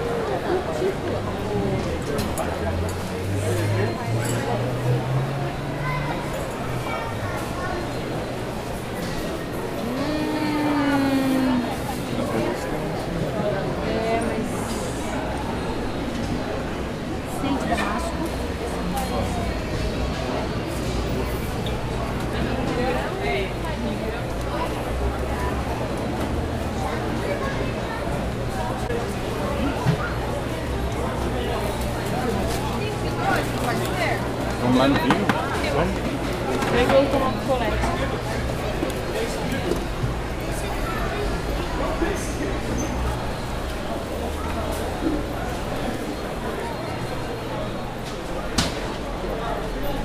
Public Market, Curitiba, Brazil
Largest public market in Curitiba, Brazil
Paraná, Brasil